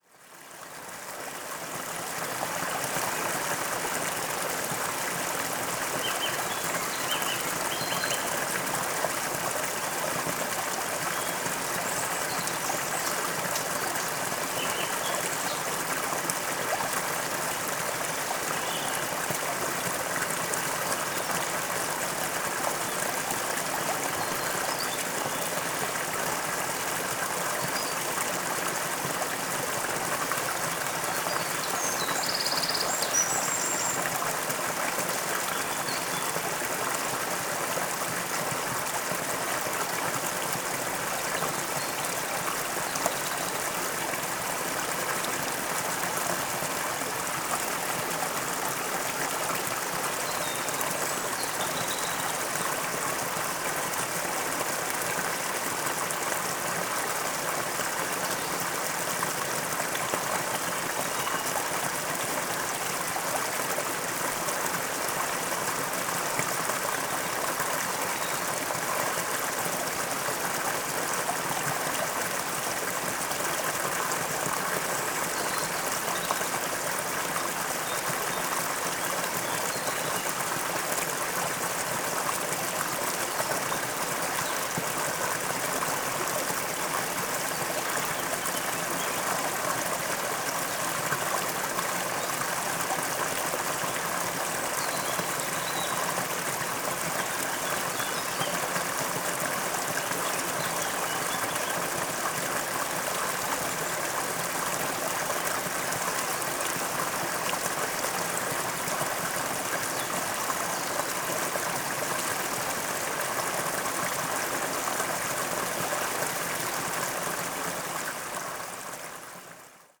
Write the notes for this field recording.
a snappy stream flowing towards the lake at the Buki nature reserve (roland r-07)